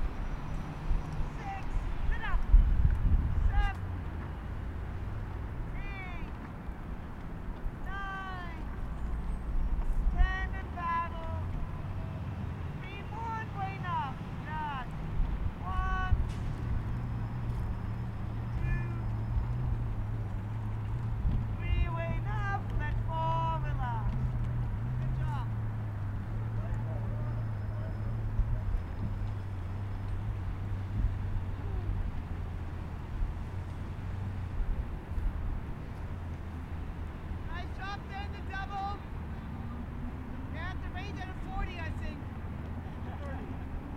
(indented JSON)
{
  "title": "Lake Merritt Amphitheatre, Oakland, CA, USA - Lake Merritt after dusk",
  "date": "2018-10-10 20:00:00",
  "description": "heard predominantly is the sound of a rowing crew practicing on the water. The lake, both on the water as well as on the path around it, is a place where many city dwellers exercise at all times of the day. It is also a sanctuary for much wildlife, and provides a safe haven for all in the middle of the busy city.",
  "latitude": "37.80",
  "longitude": "-122.26",
  "altitude": "1",
  "timezone": "America/Los_Angeles"
}